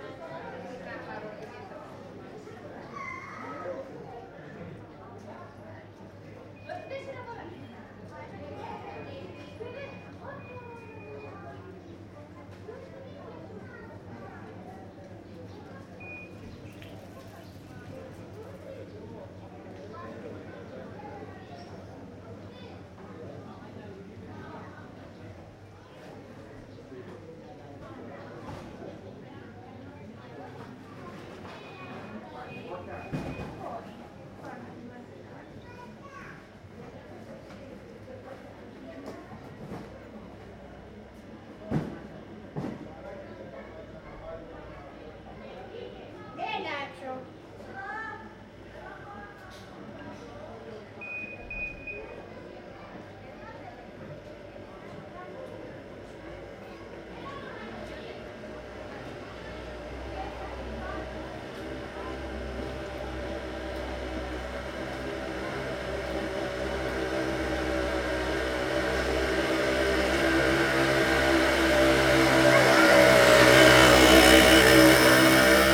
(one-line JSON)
{"title": "Fourni, Griechenland - Seitenstrasse", "date": "2003-05-09 17:11:00", "description": "Am Abend in einer Seitenstrasse. Die Insel ist Autofrei.\nMai 2003", "latitude": "37.58", "longitude": "26.48", "altitude": "13", "timezone": "Europe/Athens"}